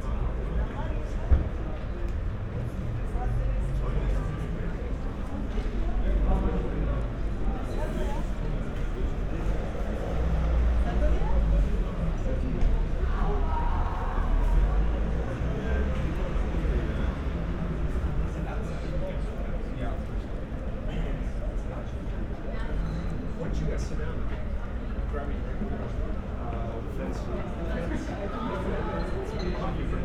berlin: kottbusser tor - the city, the country & me: balcony nearby café kotti
night ambience
the city, the country & me: may 16, 2013